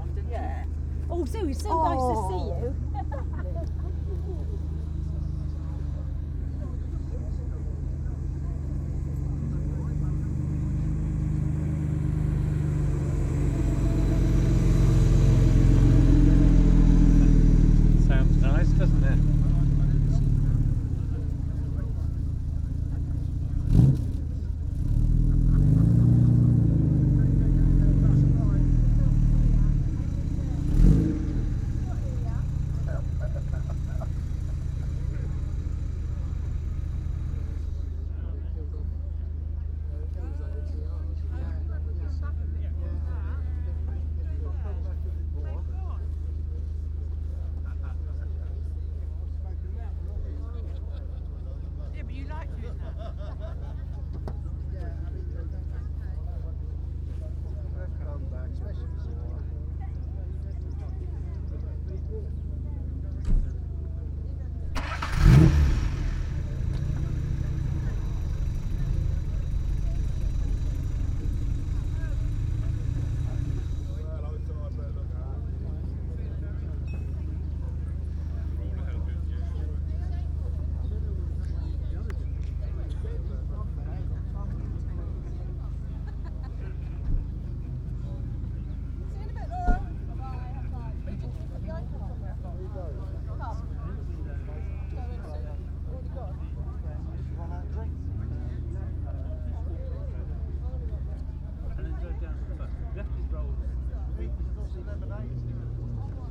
Hambleden, Henley-on-Thames, UK - Jaguar, Ferrari and Classic cars behind the pub.
The lovely hamlet of Hambledon in Oxfordshire played host today to a classic car meet in the recreation field behind the pub. There were Jaguars, Ferraris Aston Martins Triumphs and many more. I walked around the show ground with the Sony M10 and built in mics, it is unedited.